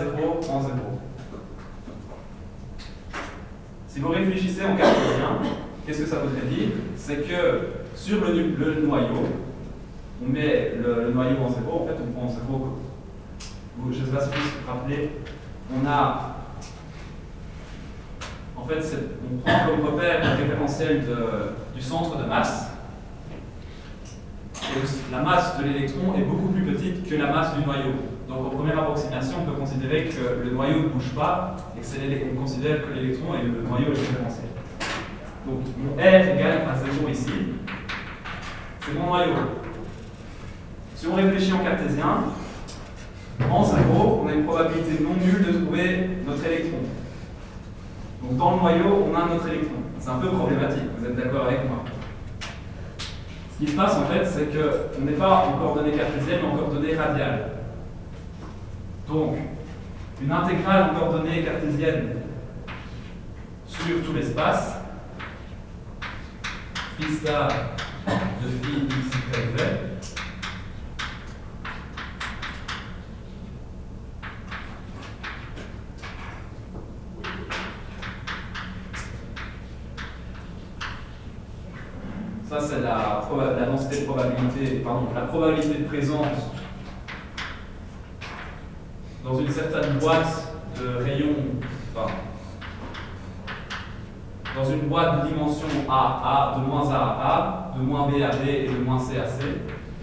Quartier du Biéreau, Ottignies-Louvain-la-Neuve, Belgique - A course of physical science
A course of physical science. It looks like complicate and nobody's joking.
Ottignies-Louvain-la-Neuve, Belgium, 11 March 2016